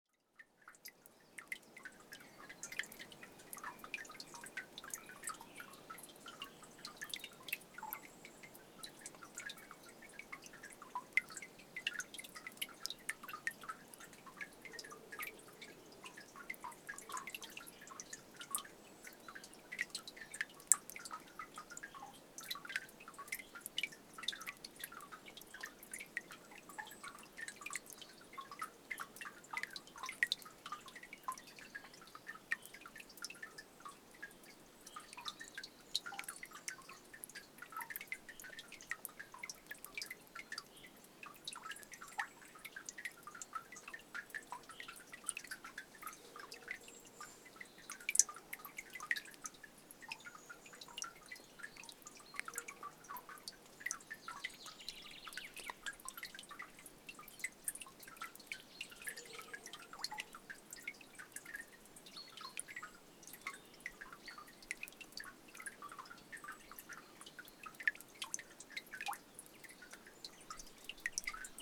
Lithuania, Jasonys, the last melting ice - the last melting ice
the last melting ice on the frozen streamlet